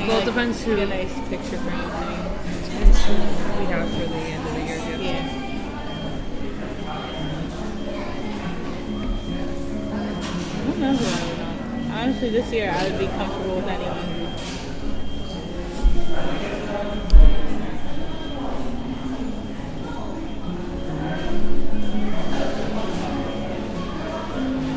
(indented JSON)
{
  "title": "West Windsor Township, NJ, USA - Panera Bread",
  "date": "2014-03-02 17:05:00",
  "description": "Sitting through a meal at Panera Bread.",
  "latitude": "40.31",
  "longitude": "-74.68",
  "timezone": "America/New_York"
}